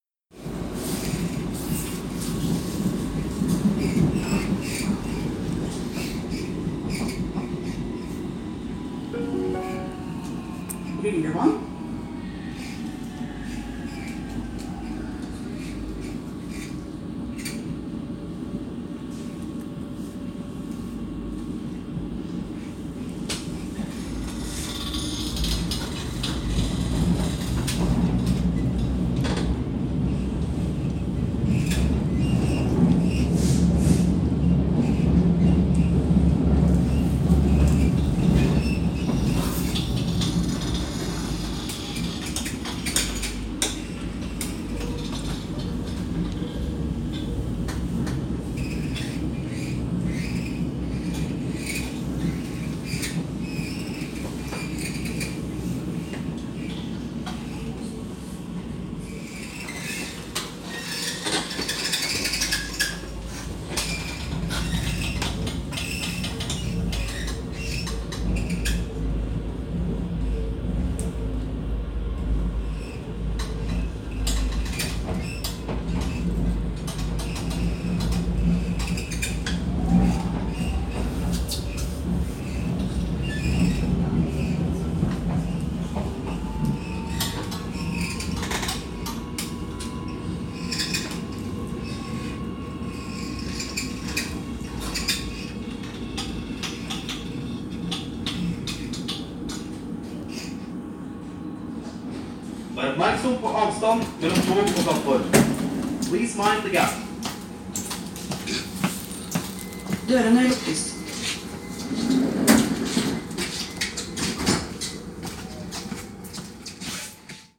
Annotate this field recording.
Lillevann, Oslo: Oslo T-bane (Oslo metro) announcements at Lillevann stop.